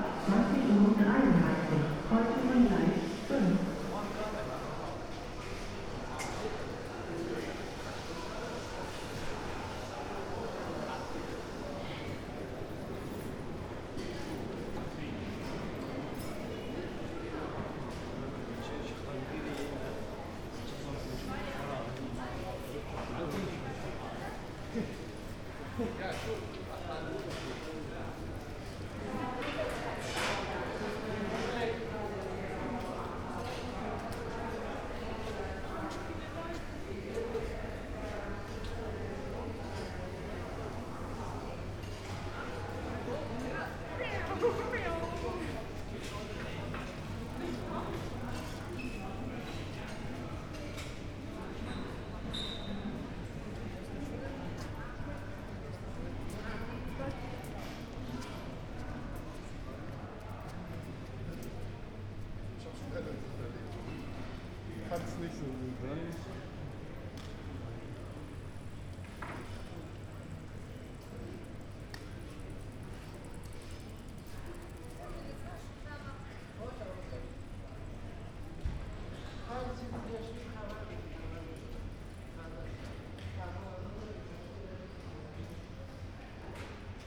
Bremen, Hauptbahnhof, main station - station walk
walking through Hauptbahnhof Bremen
(Sony PCM D50, Primo EM172)
Bremen, Germany, 1 May 2018